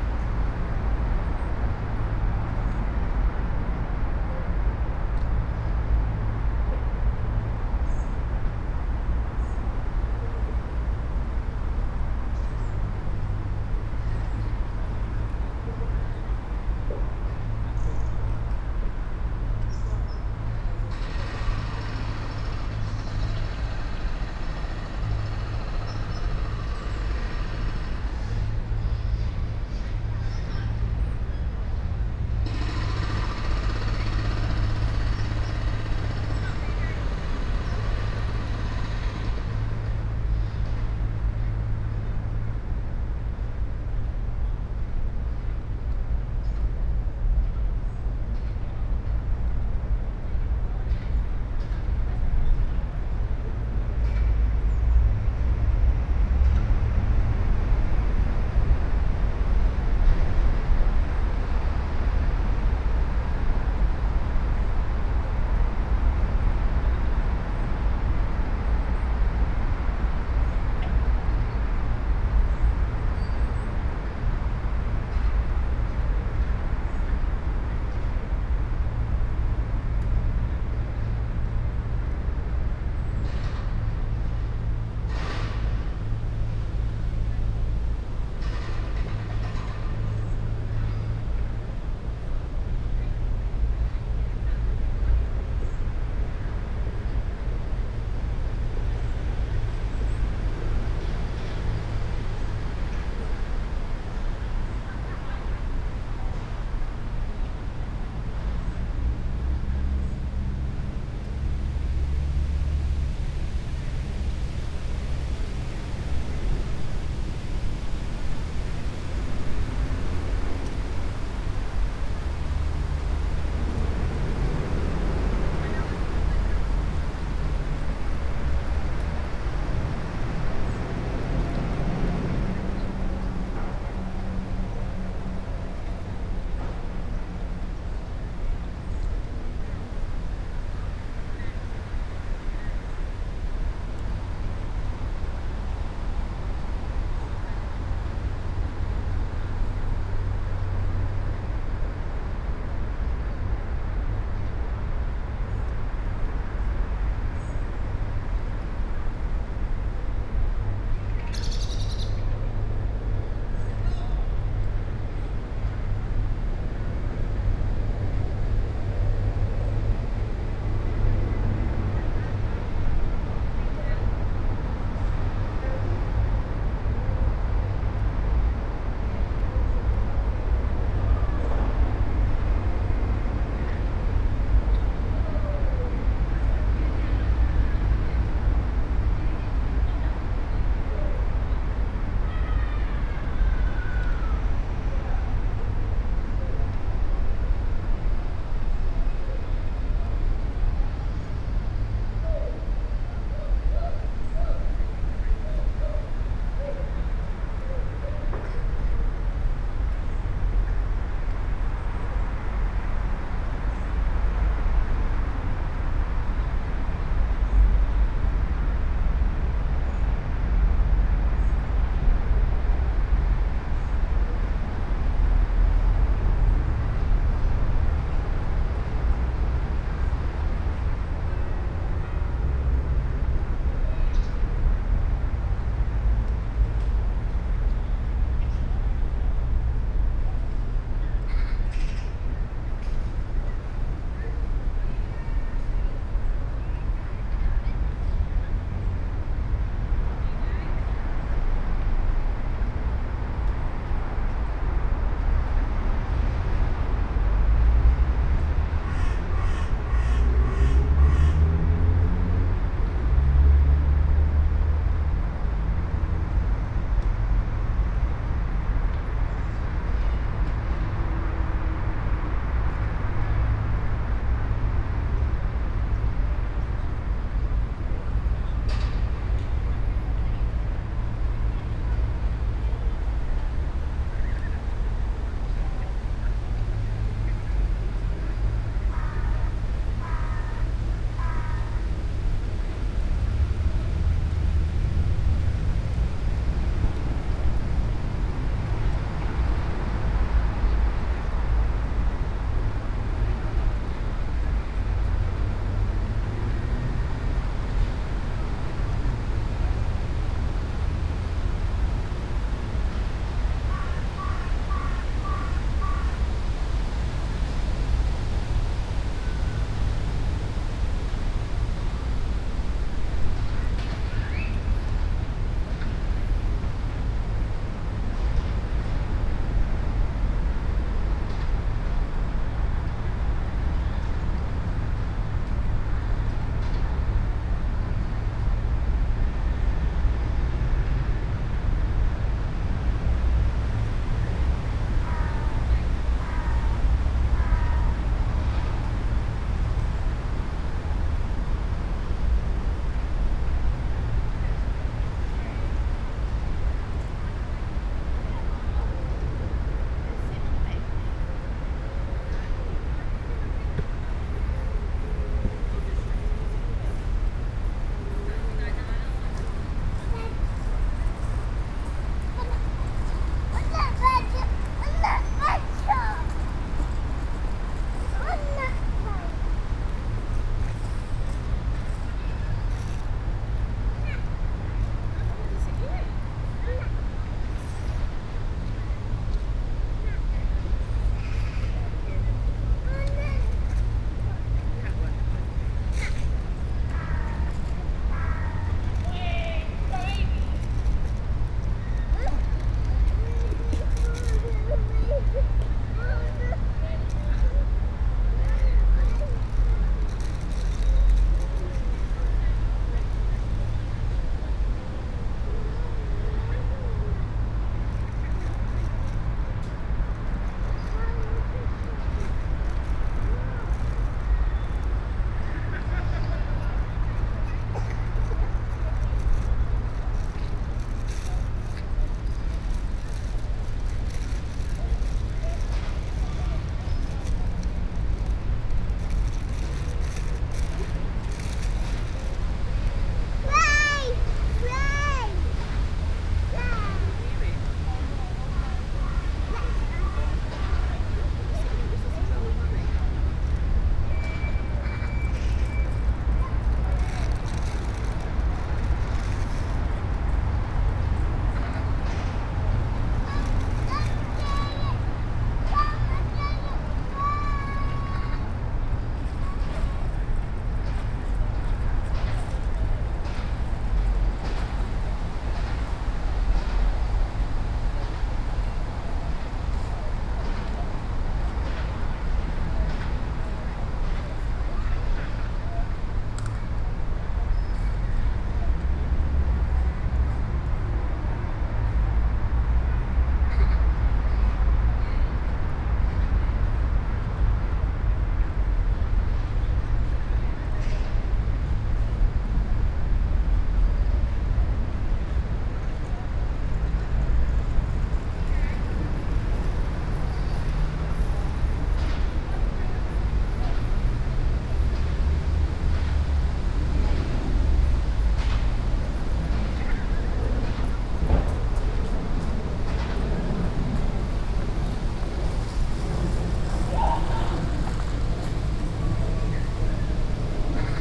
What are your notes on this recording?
A 20 minute meditation in Forbury Gardens overlooking the bandstand and Abbey Gateway. Construction work and the Inner Distribution Road behind dominate the soundscape and generate a lot of low-frequency rumble. This is punctuated with a light breeze blowing through the trees, pigeon calls, young children exploring the park with their carers and the chat of workers from the surrounding offices walking past. Recorded using a spaced pair of Sennheiser 8020s on a SD MixPre 6.